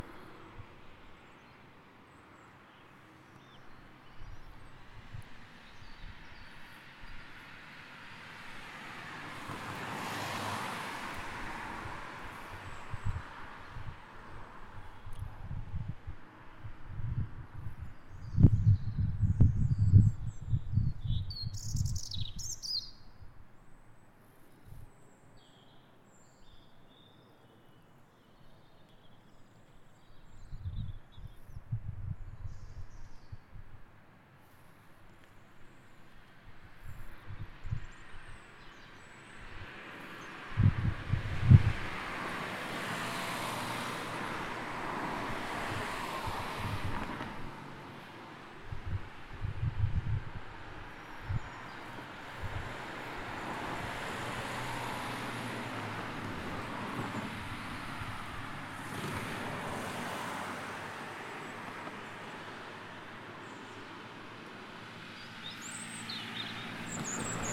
Penn Grove Rd, Hereford, UK - Birds battling traffic
Birds struggling to be heard over traffic.